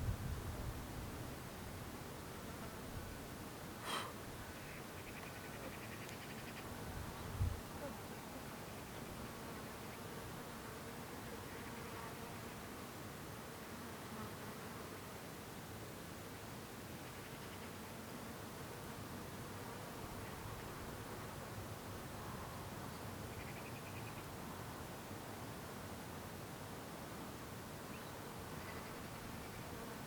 Lough gur, Co. Limerick, Ireland - Carraig Aille forts ring forts
Two ring forts dating to the 8th-11th Centuries. Dwellings have been found both within and out of the ring forts, and Bronze Age, Iron Age, and Stone Age tools were also discovered, along with jewelry and bone implements.
Today, this was the first place we found that was dominated by the natural soundscape.
Munster, Republic of Ireland, 18 July 2013, 13:30